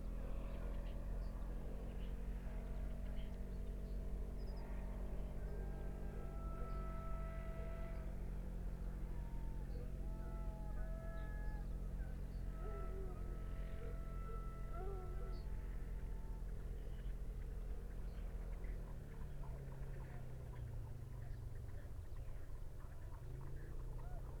urchins wood, ryedale district ... - horses and hounds ...
horses and hounds ... opportunistic recording with parabolic ...